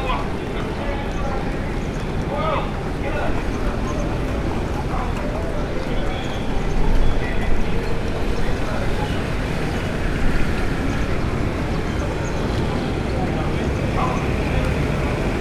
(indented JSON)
{
  "title": "Allees Khalifa Ababacar Sy, Dakar, Senegal - jet d’eau sicap",
  "date": "2020-02-22 12:31:00",
  "description": "The roundabout “jet d’eau sicap” and its surrounding buildings is part of a urbanisation projects from the end of 1950’s.\nSicap is part of the name of a number of districts in Dakar that were planned and built by the Société immobilière du Cap-Vert (SICAP).",
  "latitude": "14.71",
  "longitude": "-17.46",
  "altitude": "27",
  "timezone": "Africa/Dakar"
}